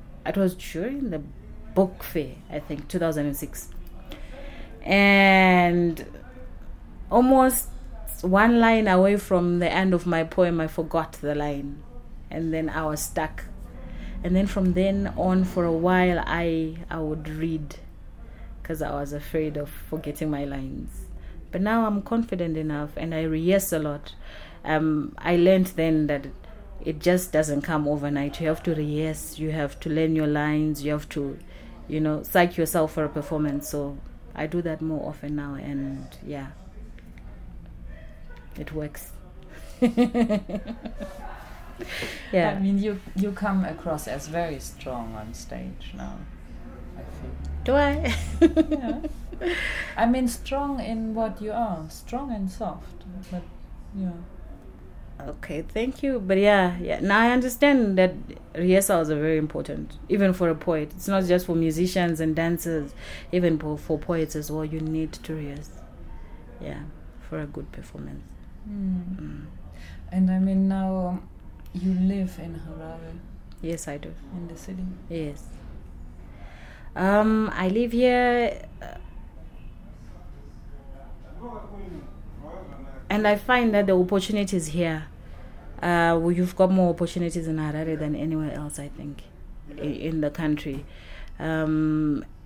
Harare South, Harare, Zimbabwe - Batsirai Chigama - inspiration could be a word...
We are with the poet Batsirai Chigama in the Book Café Harare where she works as a gender officer and project coordinator for the FLAME project. It’s late afternoon, and you’ll hear the muffled sounds of the rush-hour city, and people’s voices roaming through Book Café...What has been Batsi’s way into writing and performing, and how does she see her role as a women and poet in her country? Is the city an inspiration in her work…? In this part of our conversation, Batsi takes us to the very beginnings of her career as a performance poet….
The complete interview with Batsi is archived here: